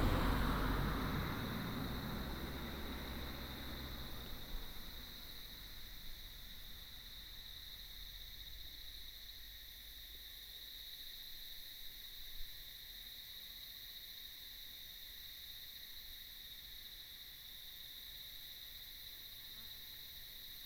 牡丹鄉199縣道1.5K, Mudan Township - sound of cicadas
Beside the road, The sound of cicadas, Small highway in the mountains, Traffic sound
2 April 2018